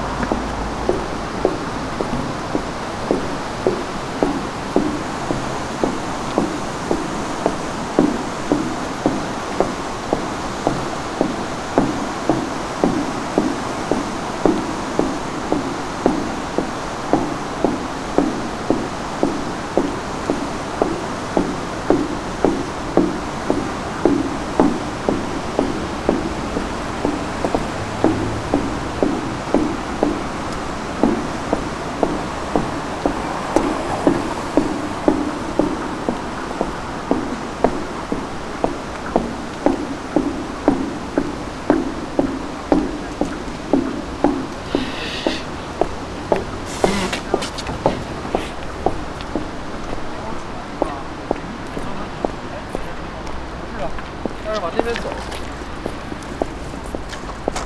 pedestrian bridge, river Mura, Graz - green boots on the pedestrian bridge across the river Mura